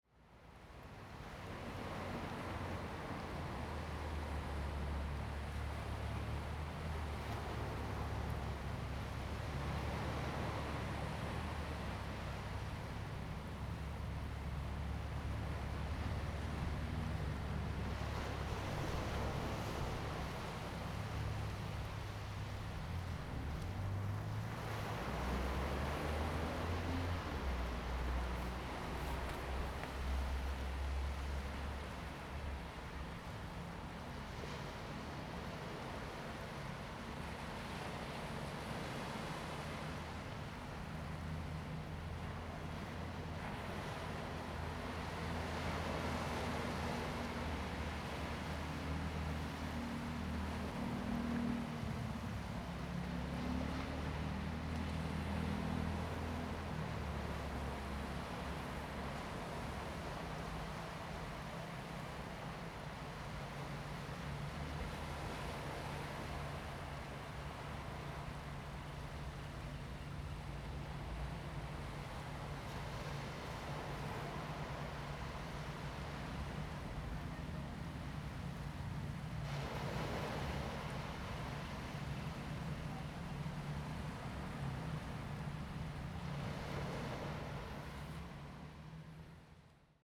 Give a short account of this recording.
sound of the waves, Traffic Sound, On the coast, Zoom H2n MS +XY